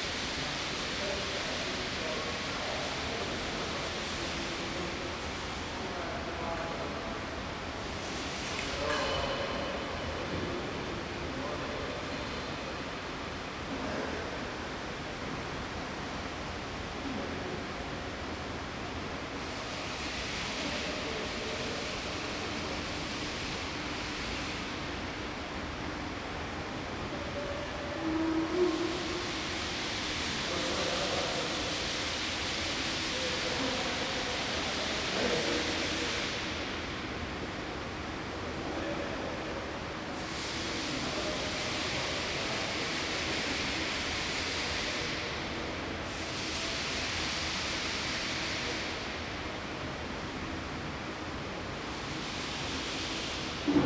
Rijeka, Croatia, Kantrida, SwimingPool - OpeningDays